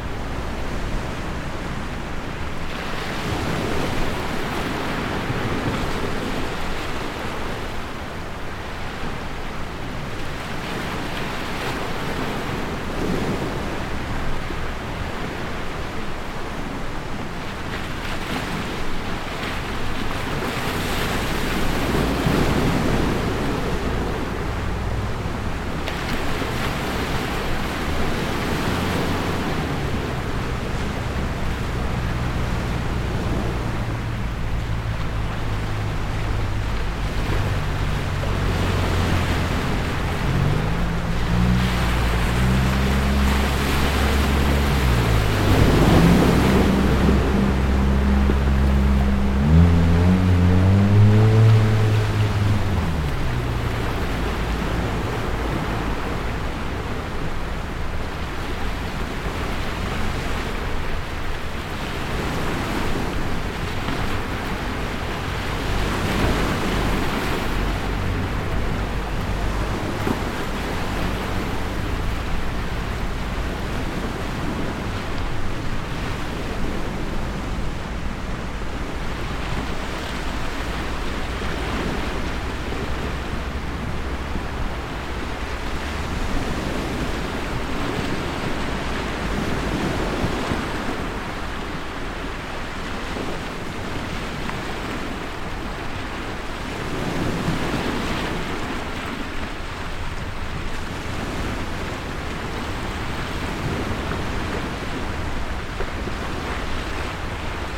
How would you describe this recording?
wave sound road noise, Captation : ZOOM H6